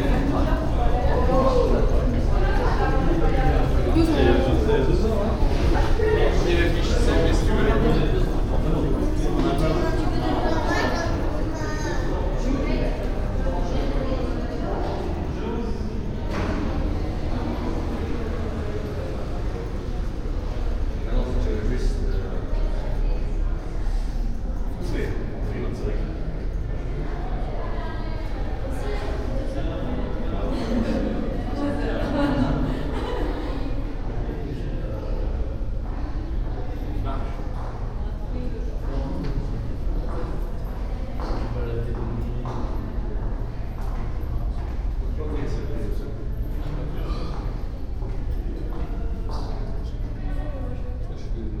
Walking inside a pedestrian tunnel then taking the elevator to the upper part of the town. The sound of steps and people talking in the reverbing tunnel- then the enclosed atmosphere inside the elevator and finally an automatic voice and the opening of the elevator door.
international city scapes - topographic field recordings and social ambiences